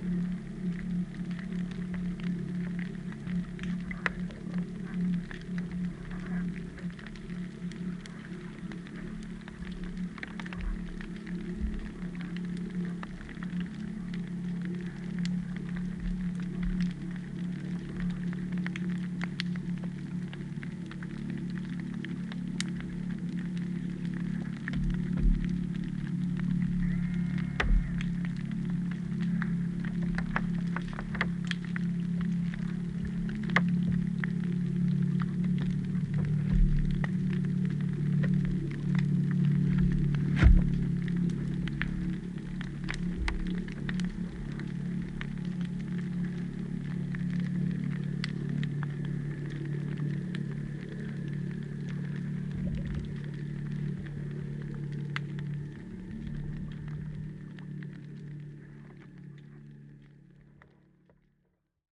Kildonan Bay, Isle of Eigg - Pistol Shrimp, Outboard Motor & Flushing Toilet
Recorded with an Aquarian Audio H2a hydrophone and a Sound Devices MixPre-3
UK